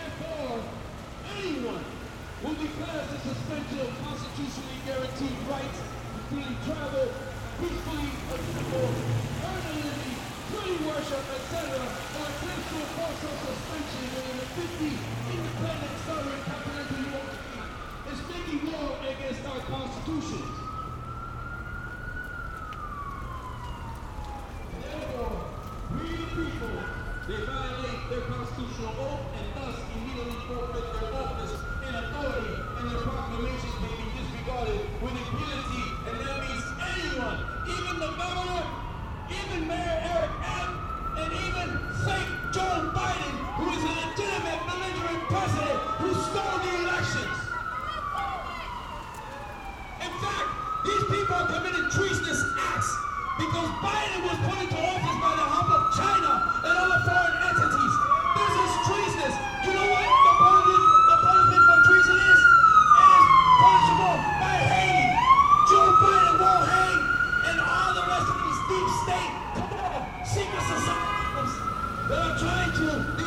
A small group of protesters stands against masks and COVID-19 vaccines mandates.
Using conspiracy theory rhetoric, a man with a megaphone shares his views on mandates.